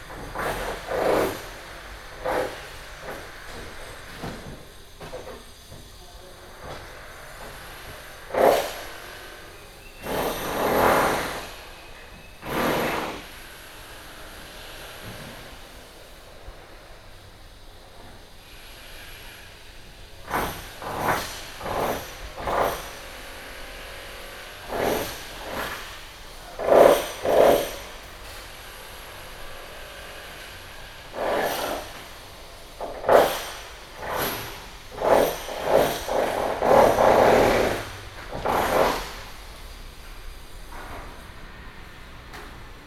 Beitou, Taipei - Being renovated house
Beitou District, Taipei City, Taiwan, 15 October 2012, 11:24